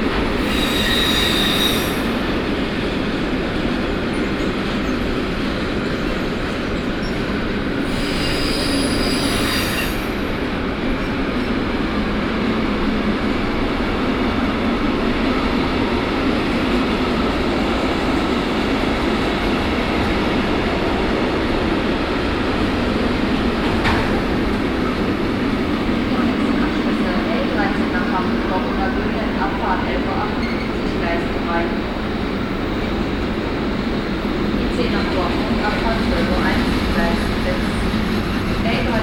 At the tracks at Hagen main station. Here standing under the openn sky. A train driving in the station and an anouncement.
soundmap d - topographic field recordings and socail ambiencs
Hagen, Germany, 20 November 2013, 11:40